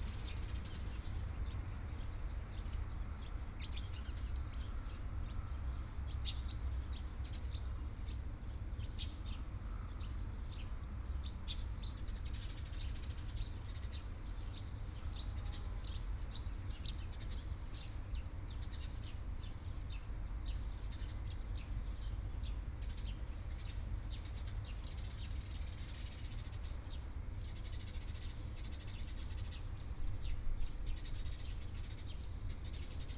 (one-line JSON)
{"title": "慶興廟, Wujie Township - In the temple plaza", "date": "2014-07-27 15:46:00", "description": "In the temple plaza, Hot weather, Traffic Sound, Birdsong, Small village", "latitude": "24.67", "longitude": "121.83", "altitude": "6", "timezone": "Asia/Taipei"}